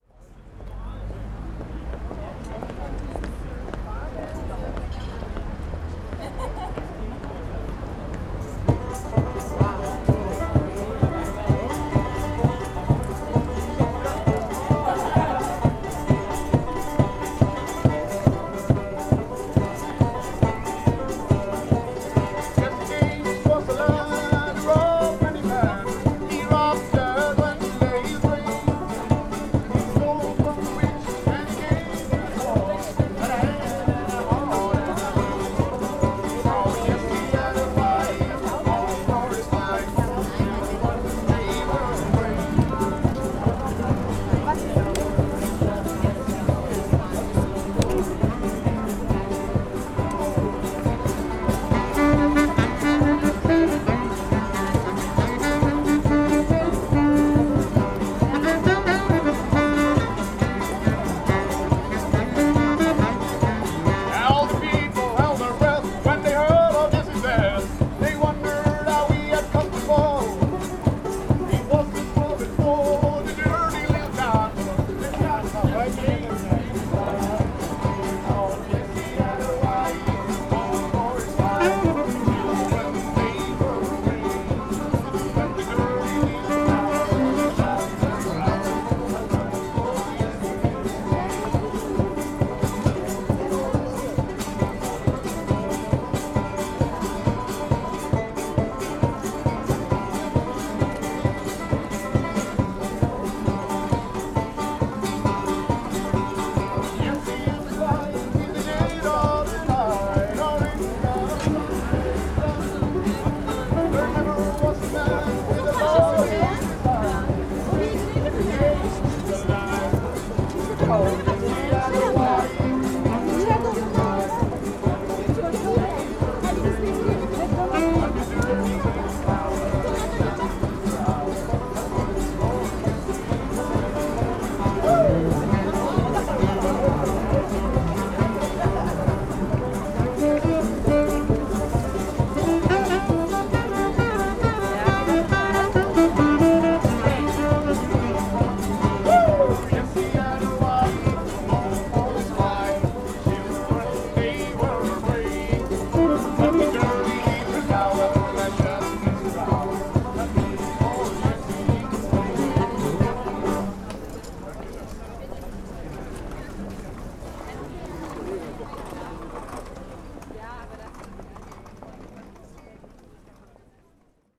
berlin: kottbusser damm - the city, the country & me: street musicians
the city, the country & me: april 15, 2011
Berlin, Germany